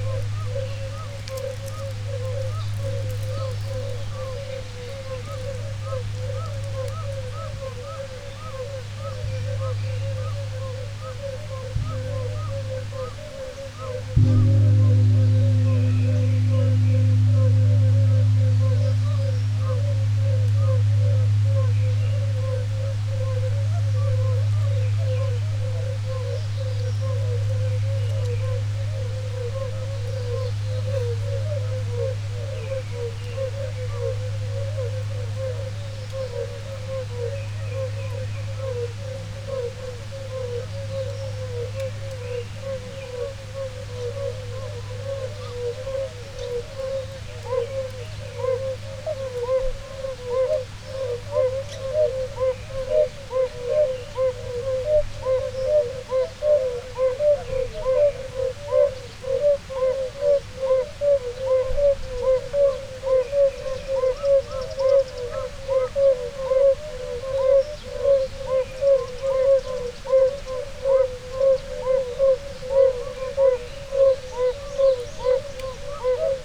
by chance...it may be that these vocalists are Asian fire-bellied toads
26 May 2018, 18:00